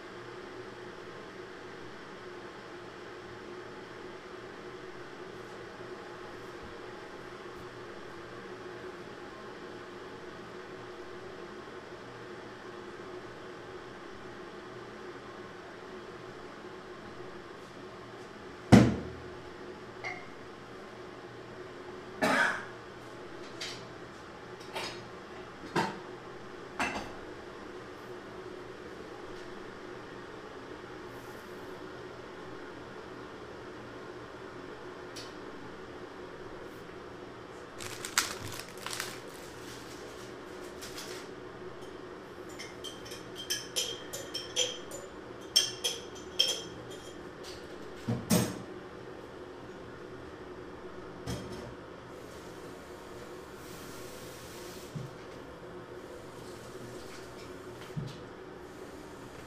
Rodelbergweg, Baumschulenweg, Berlin, Deutschland - Küche